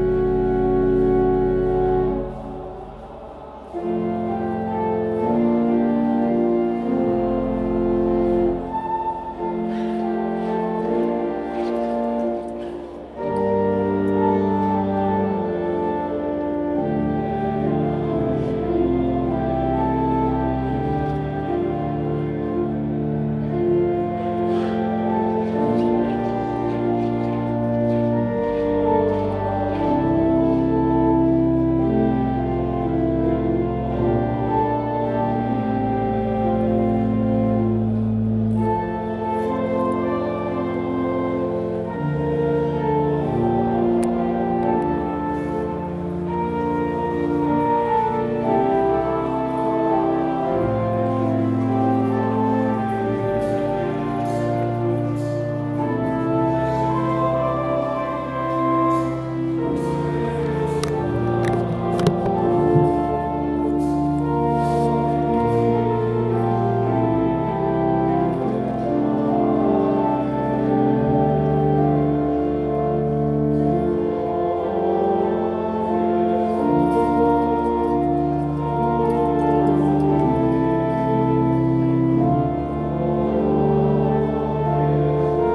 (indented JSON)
{
  "title": "Jerusalem, Israel, Church of Holy Sepulcher - Chanting- Church of the Holy Sepulchre-1",
  "date": "2021-11-12 17:12:00",
  "description": "A Zoom Recording of the 17:00 pm daily chanting, at the Church of the Holy Sepulchre, Christian Quarter of the Old City, Jerusalem",
  "latitude": "31.78",
  "longitude": "35.23",
  "altitude": "767",
  "timezone": "Asia/Hebron"
}